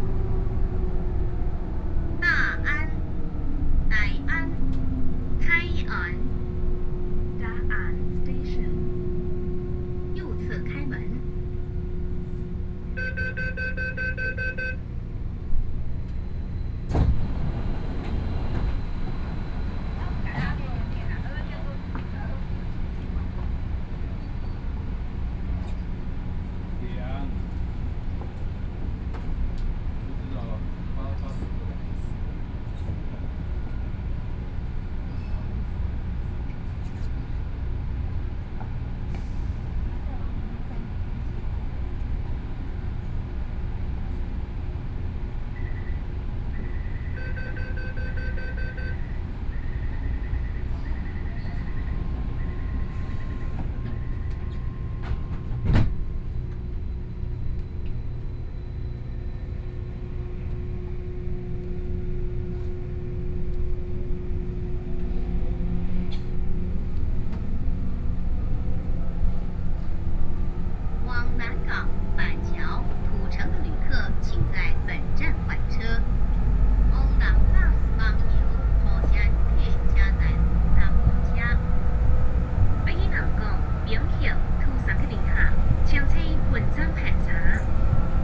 from Wanfang Hospital station to Zhongxiao Fuxing station, Sony PCM D50 + Soundman OKM II